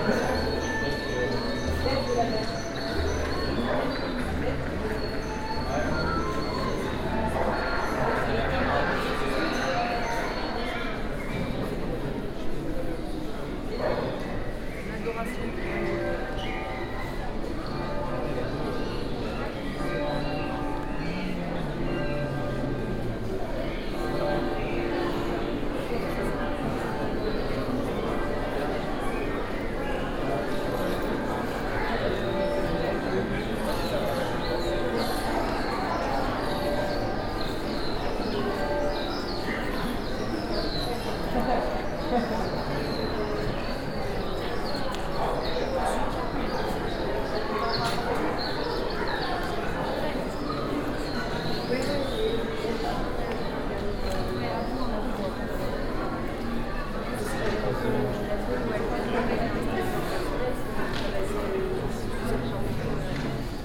Binaural recording of a walk-around Apocalypse Tapestry exhibition at Château d'Angers.
Recorded with Soundman OKM on Sony PCM D100
France métropolitaine, France, 17 August 2019, 16:33